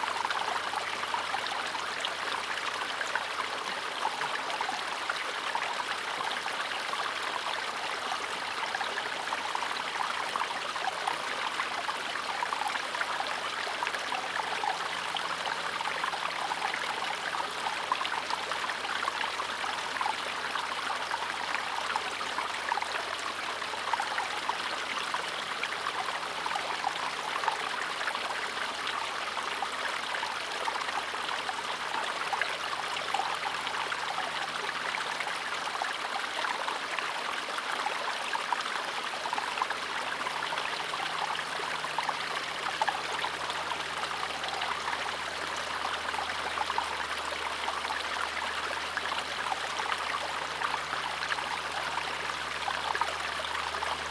Aik Beck, Penrith, UK - Aik Beck water fall